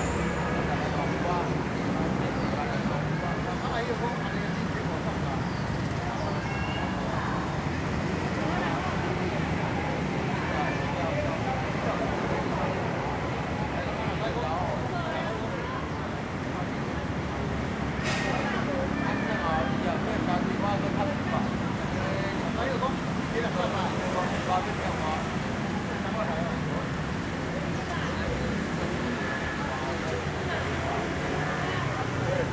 信義公園, Sanchong Dist., New Taipei City - in the Park
in the Park
Sony Hi-MD MZ-RH1 +Sony ECM-MS907
Sanchong District, New Taipei City, Taiwan, February 2012